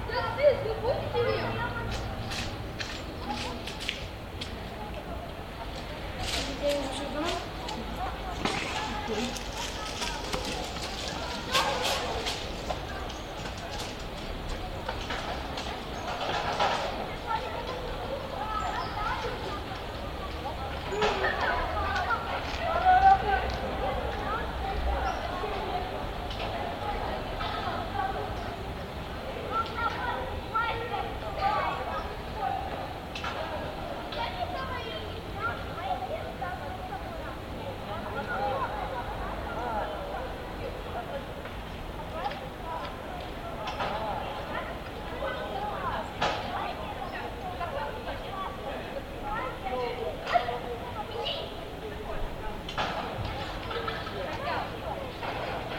вулиця Незалежності, Костянтинівка, Донецька область, Украина - Детские игры и прохожие
Шум осенней улицы в провинциальном городе
Звук:
Zoom H2n
23 October, 13:48